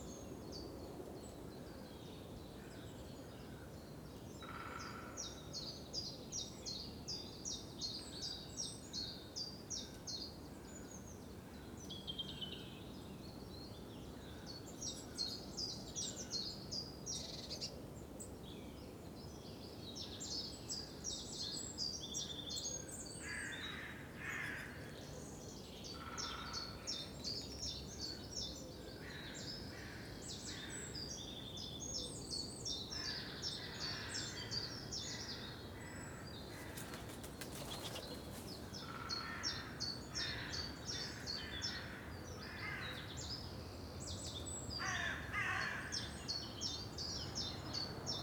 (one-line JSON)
{"title": "Rue de lAntilipe, Uccle, Belgique - finally peace 8", "date": "2020-03-23 07:58:00", "latitude": "50.79", "longitude": "4.33", "altitude": "38", "timezone": "Europe/Brussels"}